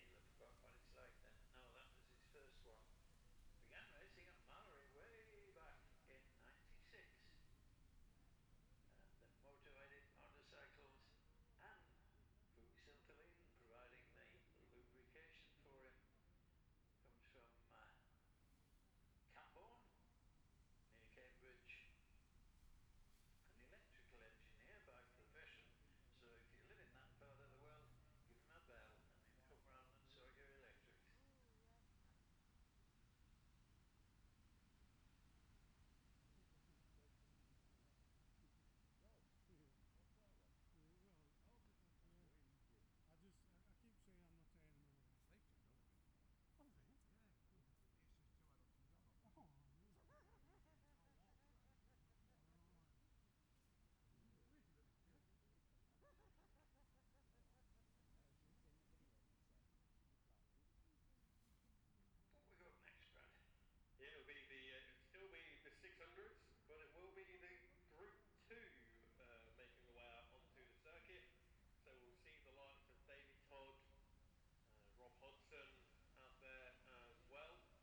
Jacksons Ln, Scarborough, UK - gold cup 2022 ... 600cc qualifying
the steve henshaw gold cup 2022 ... 600cc qualifying group 1 ...group two ... dpa 4060s on t-bar on tripod to zoom h5 ...